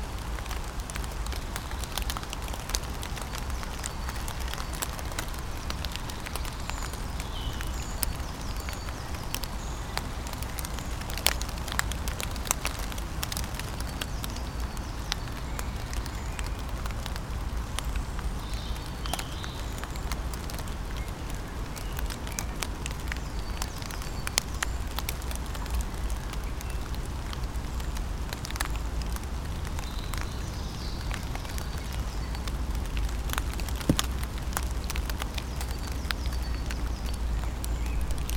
Weimar, Deutschland - OstPunkt
Seam (Studio fuer elektroakustische Musik) - klangorte - OstPunkt
Weimar, Germany